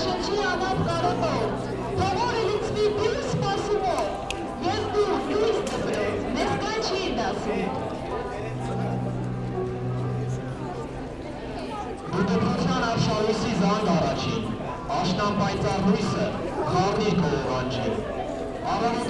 Yerevan, Arménie - First day at school
The first day at school is very important in Armenia. It's a local festivity. During this morning and before the first hour in class, young students proclaim speeches.